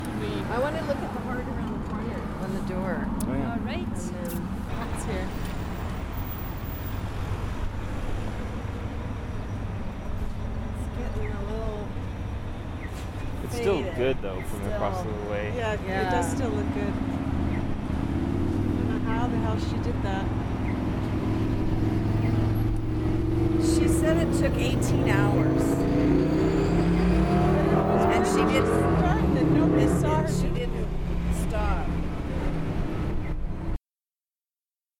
East Village, Calgary, AB, Canada - King Eddy - Heart
This is my Village
Tomas Jonsson